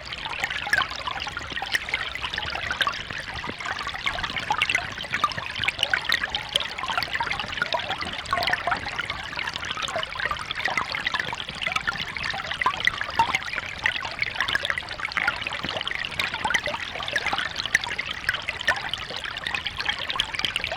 Early Braes Park, Glasgow, Glasgow City, UK - Hydrophone recording of Tollcross Burn
Underwater hydrophone recording of Tollcross Burn under small bridge at Early Braes Park, Glasgow.
26 June